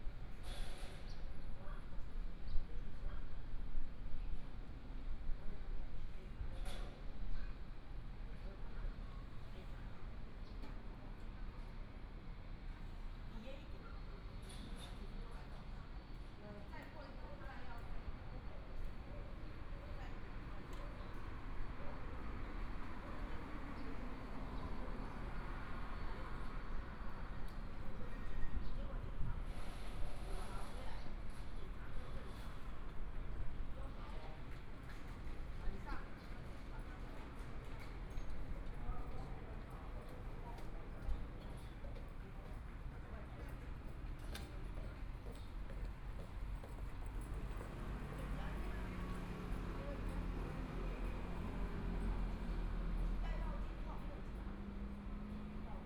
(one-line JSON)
{"title": "Taitung Station, Taiwan - outside of the Station", "date": "2014-01-18 10:45:00", "description": "Travelers to and from the Station outside the channel, Binaural recordings, Zoom H4n+ Soundman OKM II", "latitude": "22.79", "longitude": "121.12", "timezone": "Asia/Taipei"}